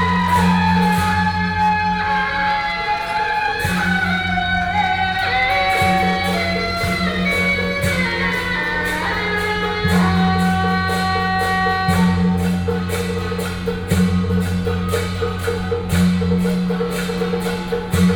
Traditional temple festivals, Under the bridge, “Din Tao”ßLeader of the parade, Firecrackers
5 June 2017, 19:05